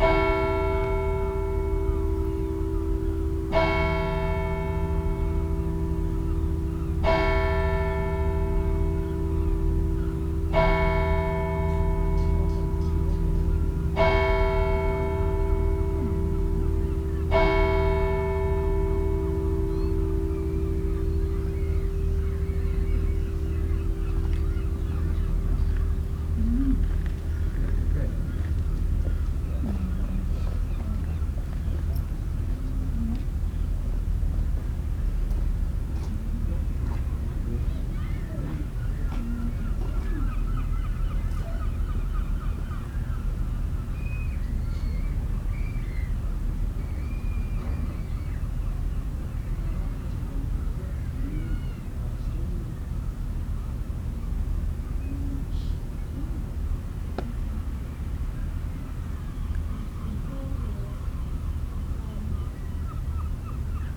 Whitby, UK - St Marys Church clock striking 12:00 ...
St Mary's clock striking midday ... voices ... people walking around the church yard ... noises from the harbour ... open lavalier mics clipped to sandwich box lid ... perched on rucksack ...
July 2015